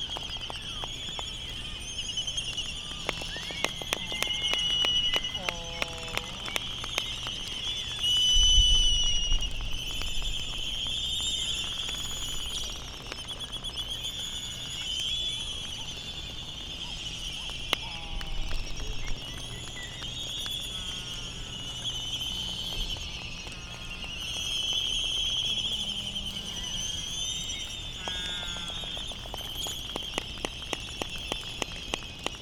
United States Minor Outlying Islands - Laysan albatross colony soundscape ...
Laysan albatross colony soundscape ... Sand Island ... Midway Atoll ... laysan calls and bill clapperings ... canary song ... background noise from buggies and voices ... a sunrise wake up call ... open lavalier mics ...
13 March 2012, 06:49